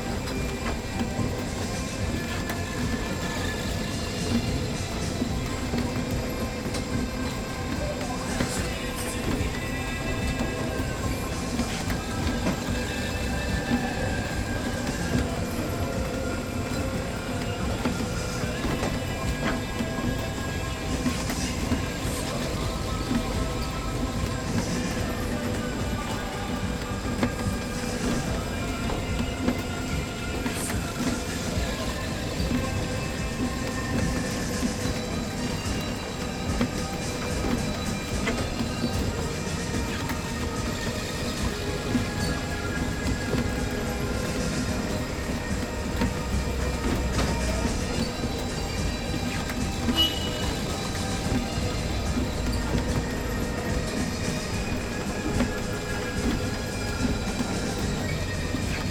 venloer str. - karussell / little merry-go-round
repeatin machine noise of a little merry-go-round